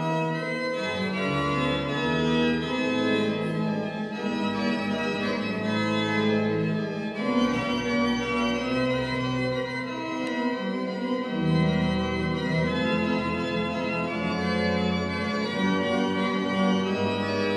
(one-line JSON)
{"title": "Králíky, Česká republika - půlnoční mše, klášter", "date": "2013-12-24 23:00:00", "latitude": "50.07", "longitude": "16.78", "altitude": "765", "timezone": "Europe/Prague"}